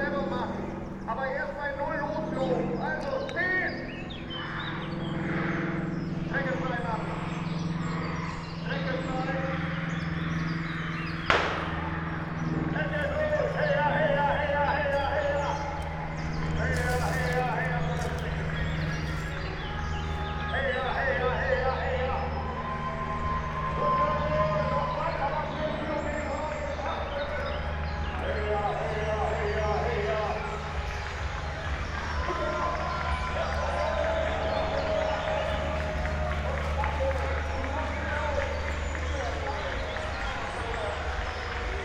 Eversten Holz, Oldenburg - kids marathon starting
hundreds of school kids start running, heard within the forest
(Sony PCM D50, Primo EM172)
Oldenburg, Germany